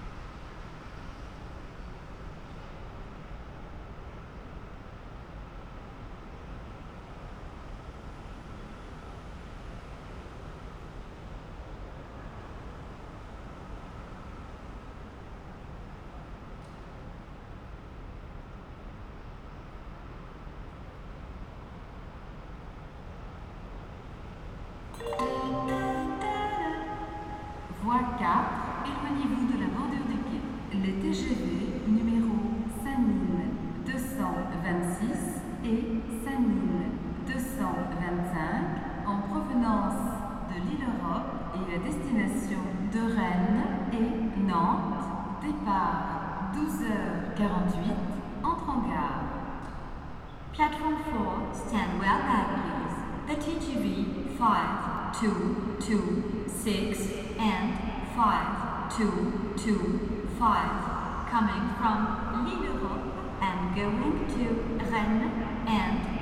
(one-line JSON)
{"title": "Gare Aéroport Charles De Gaulle 2 - TGV - CDG airport – TGV station", "date": "2018-01-31 12:42:00", "description": "Large train station hall atmostphere, almost unmanned.Traffic noise from above. SNCF announcements and arrival of TGV on platform.\nAmbiance de gare vide. Bruit de trafic, venant de dessus. Annonces SNCF et arrivée du TGV sur le quai.", "latitude": "49.00", "longitude": "2.57", "altitude": "111", "timezone": "GMT+1"}